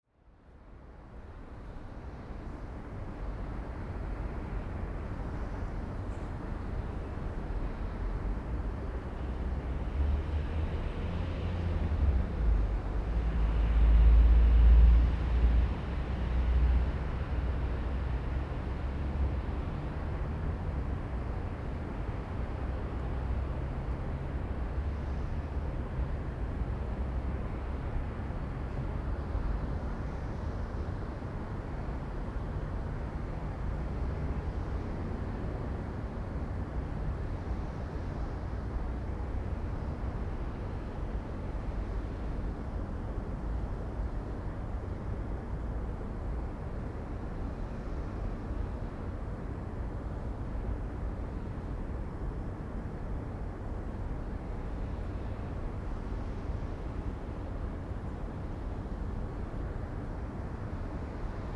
{"title": "KPN office garden, Binckhorst, Den haag - kpn garden", "date": "2012-02-28 11:21:00", "description": "quiet garden. Distant sounds of cars, trains, etc. footsteps. Soundfield Mic (ORTF decode from Bformat) Binckhorst Mapping Project", "latitude": "52.07", "longitude": "4.35", "altitude": "5", "timezone": "Europe/Amsterdam"}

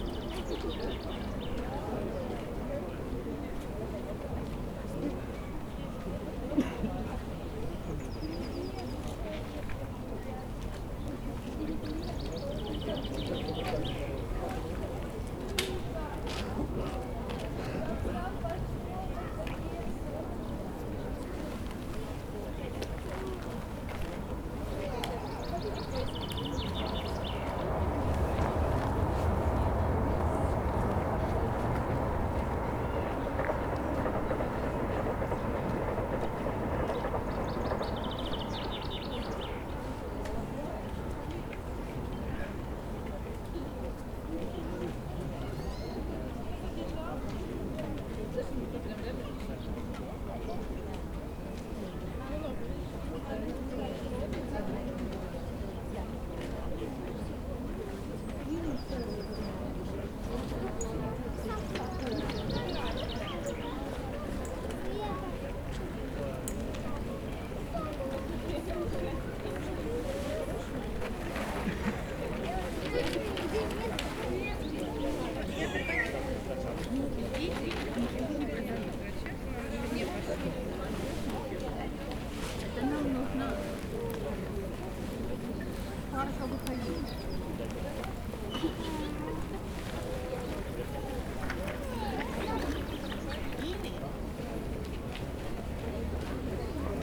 {"date": "2022-05-06 16:18:00", "description": "Cherry blossoms in the Japanese garden. May 6, 2022. The entry was made in front of the entrance to the garden.", "latitude": "55.84", "longitude": "37.62", "altitude": "135", "timezone": "Europe/Moscow"}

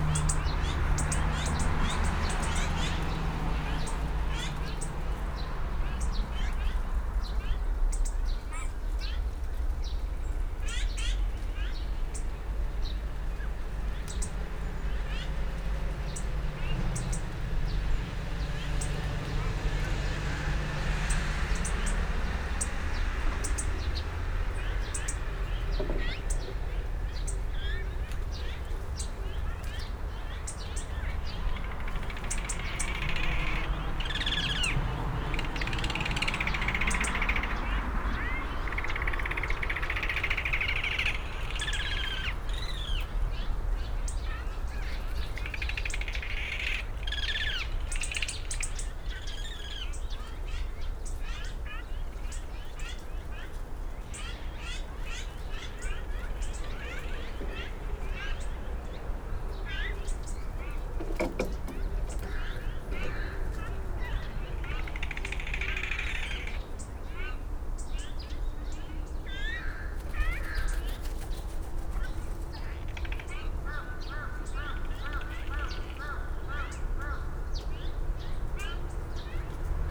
{"title": "Taegyae Coppice 물가치들 (Azure Magpie colony)", "date": "2020-04-10 09:00:00", "description": "Azure Magpies congregate in large groups around this part of Anma-san...there is a remnant coppice remaining on flat land at the foot of the steep hillside, despite much clearing of land in the surrounding area over these last few years...the coppice provides slightly different habitat than the nearby wild hillside, and there is a lot of protection, privacy and grazing for these birds...perhaps they nest in this area of trees...the voices of these Azure Magpies are distinct from the white/black Asian Magpies, and has an interesting noisy rythymic energy...", "latitude": "37.85", "longitude": "127.75", "altitude": "117", "timezone": "Asia/Seoul"}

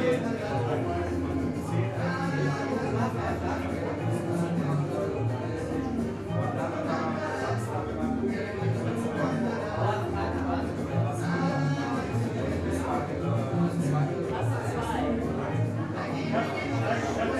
{"title": "berlin, sonnenallee: o tannenbaum - the city, the country & me: bar, project room 'o tannenbaum'", "date": "2011-02-11 00:13:00", "description": "the city, the country & me: february 11, 2010", "latitude": "52.49", "longitude": "13.43", "altitude": "43", "timezone": "Europe/Berlin"}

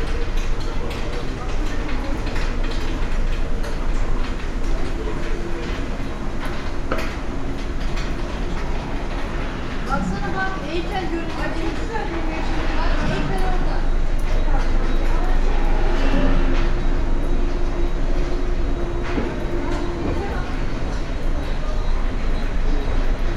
{"title": "Centre Pompidou, Paris - Centre Pompidou, Paris. Escalator", "date": "2011-07-25 20:16:00", "description": "Descending 6 floors of the outside escalator of the Centre Pompidou, Paris.", "latitude": "48.86", "longitude": "2.35", "altitude": "58", "timezone": "Europe/Paris"}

